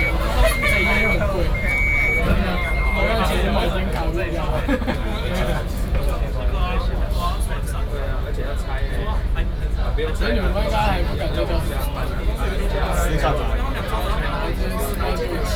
Lingya, Kaohsiung - In the subway
In the subway, Sony PCM D50 + Soundman OKM II